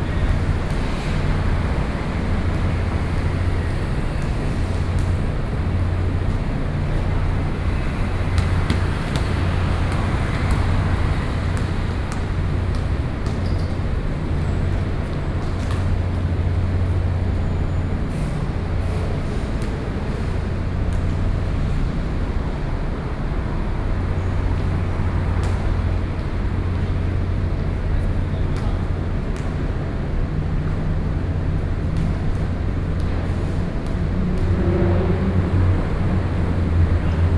Jinshan South Road, Taipei City - Under the viaduct